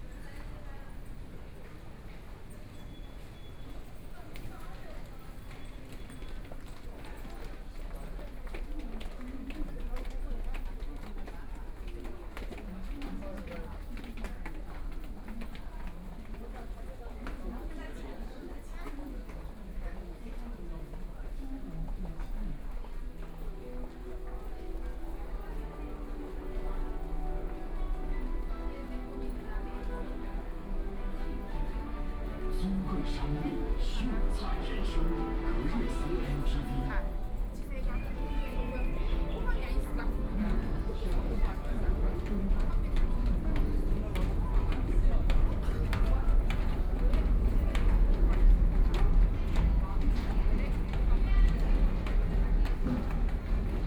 Yishan Road Station, Xuhui District - walking in the station
walking in the station, Binaural recording, Zoom H6+ Soundman OKM II
Xuhui, Shanghai, China, 23 November 2013